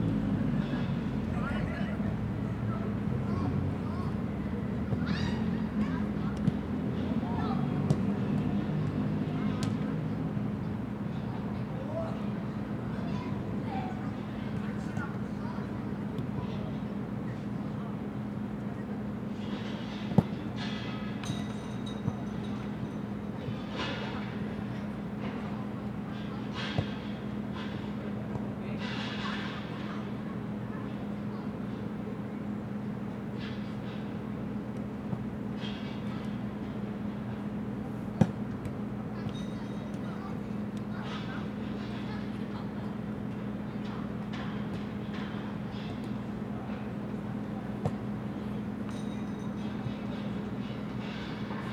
대한민국 서울특별시 서초구 서초동 서운로 178 - Seocho Elementary School
Seocho Elementary School, kids playing soccer